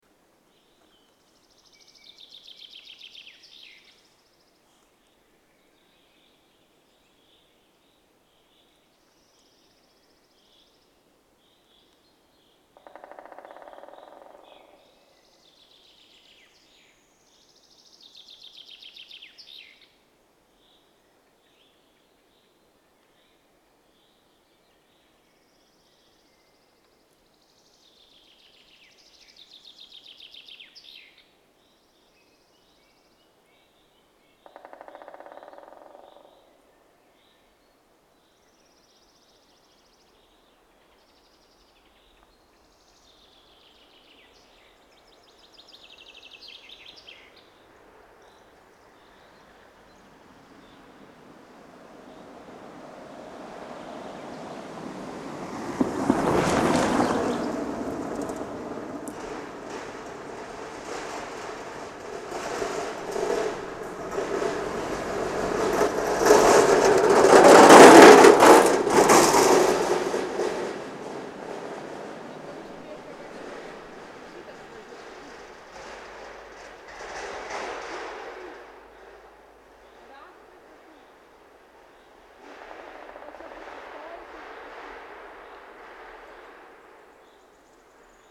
Aukštaitija National Park, Lithuania, on a bridge
a wooden bridge in the forest...the natural soundscape is disturbed by cars
Utena district municipality, Lithuania, 26 May